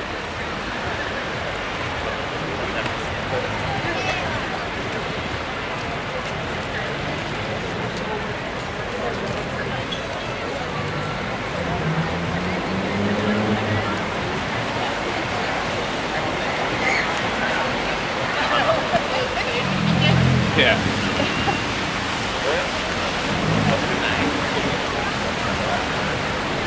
Belfast, UK - Belfast Christmas Market Outside- Pre-Restriction
After two years without any Christmas Markets, the city of Belfast decided to organise it again. This recording faces the queue waiting to go inside the market as the rain falls. Recording of a queue waiting, vehicle passing, raindrops falling, wet roads, wet sidewalk, pedestrians, multi-group chatter, "Not wearing masks", metal gate movement, vehicle horn, children talking/yelling, distant music.